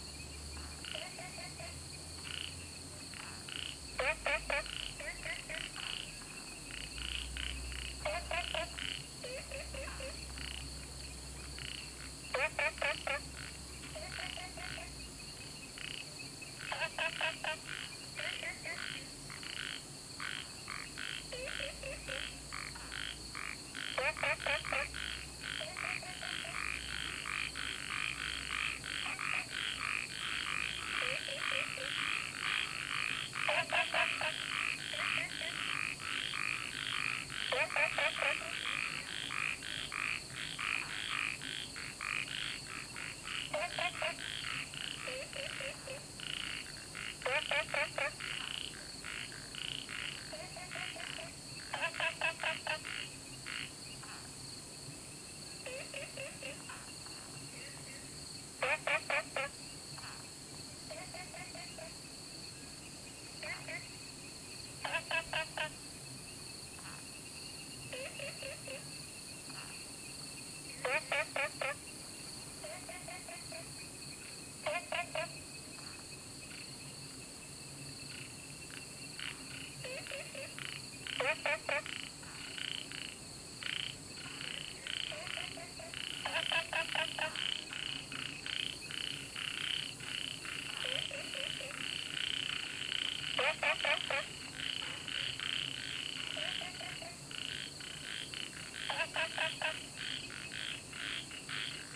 {"title": "桃米巷, 南投縣埔里鎮桃米里 - Frogs chirping", "date": "2015-08-10 20:41:00", "description": "Sound of insects, Frogs chirping\nZoom H2n MS+XY", "latitude": "23.94", "longitude": "120.94", "altitude": "495", "timezone": "Asia/Taipei"}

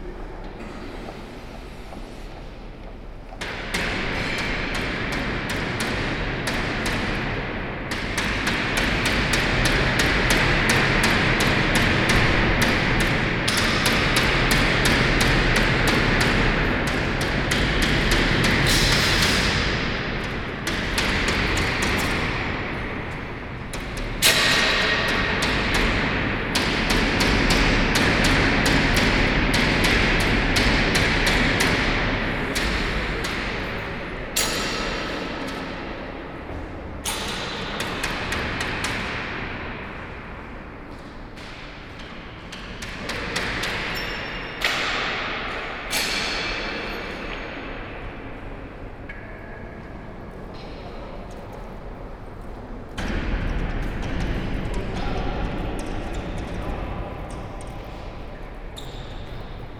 {"title": "Galerie Ravenstein Workers changing windows", "date": "2011-12-20 14:57:00", "description": "nice reverb under the rotunda", "latitude": "50.84", "longitude": "4.36", "altitude": "53", "timezone": "Europe/Brussels"}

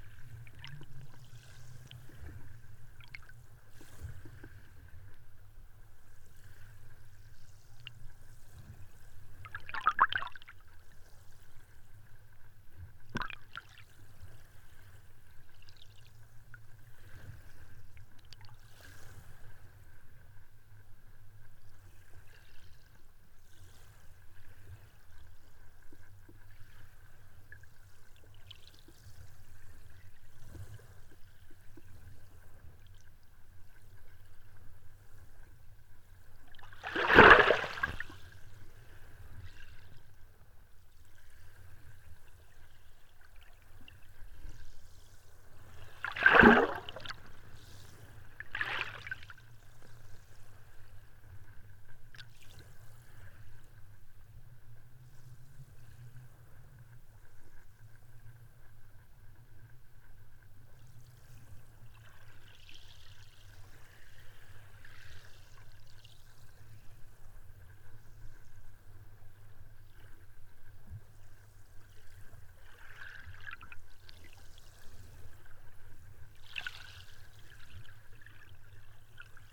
{"title": "Kolka, Latvia, calm bay waters", "date": "2021-07-15 11:50:00", "description": "calm Riga's bay waters listened through hydrophone", "latitude": "57.74", "longitude": "22.60", "timezone": "Europe/Riga"}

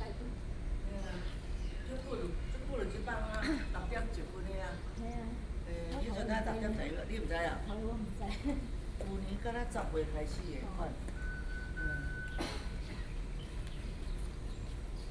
{"title": "Fuxinggang Station, Taipei - Wait for the first train", "date": "2013-04-18 06:03:00", "description": "MRT station platforms, Wait for the first train, Sony PCM D50 + Soundman OKM II", "latitude": "25.14", "longitude": "121.49", "altitude": "10", "timezone": "Asia/Taipei"}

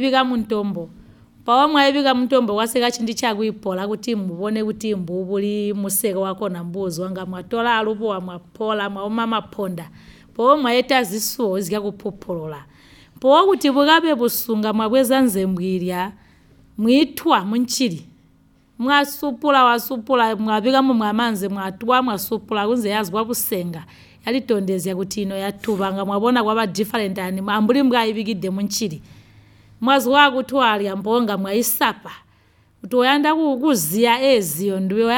{"title": "Tusimpe Pastoral Centre, Binga, Zimbabwe - how the BaTonga women produce millet flour...", "date": "2016-07-05 11:45:00", "description": "our training of detailed descriptions continued with Julia Mumpande, Zubo's community based facilitator for Siachilaba, who describes here how the Batonge women plant and harvest the traditional staple grain millet (zembwe), how they pound and then grind it on a stone...", "latitude": "-17.63", "longitude": "27.33", "altitude": "605", "timezone": "GMT+1"}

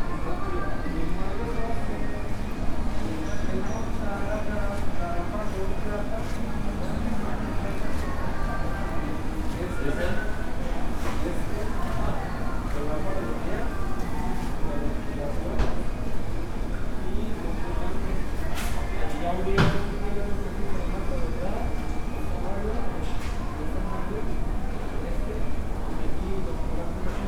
{"title": "León, Guanajuato, Mexico - Caminando por dentro de Liverpool.", "date": "2022-06-15 14:38:00", "description": "Walking inside Liverpool.\nI made this recording on june 15th, 2022, at 2:38 p.m.\nI used a Tascam DR-05X with its built-in microphones.\nOriginal Recording:\nType: Stereo\nEsta grabación la hice el 15 de junio 2022 a las 14:38 horas.\nUsé un Tascam DR-05X con sus micrófonos incorporados.", "latitude": "21.16", "longitude": "-101.70", "altitude": "1831", "timezone": "America/Mexico_City"}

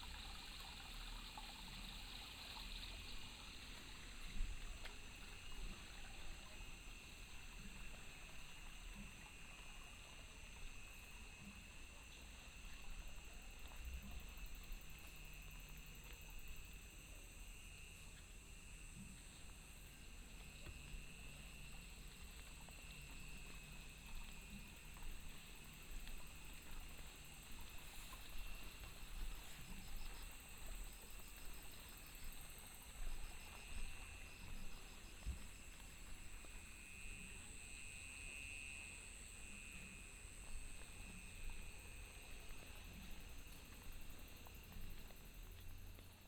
{"title": "碧雲寺竹林生態池, Hsiao Liouciou Island - in the Park", "date": "2014-11-01 18:58:00", "description": "Sound of insects, Water sound", "latitude": "22.34", "longitude": "120.37", "altitude": "38", "timezone": "Asia/Taipei"}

